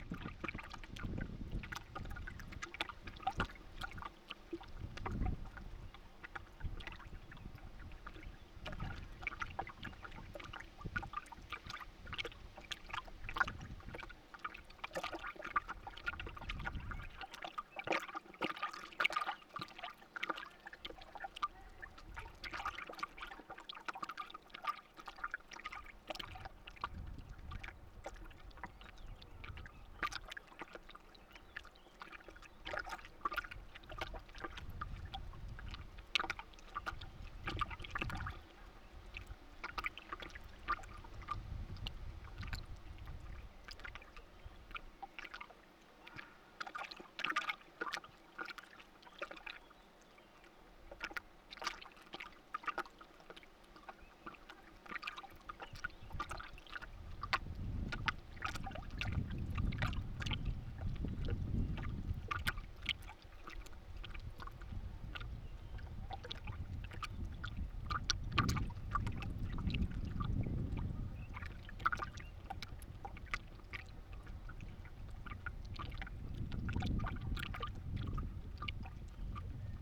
little mics placed amongst the boards of bridge